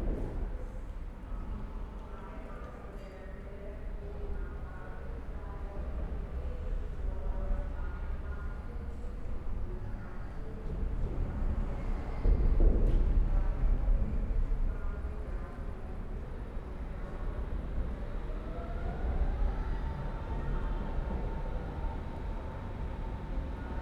Bösebrücke, Bornholmer Str., Berlin, Deutschland - under bridge, ambience
Bornholmer Str., Berlin, ambience under bridge, trains, bikes, pedestrians
(Tascam DR100MK3, DPA4060)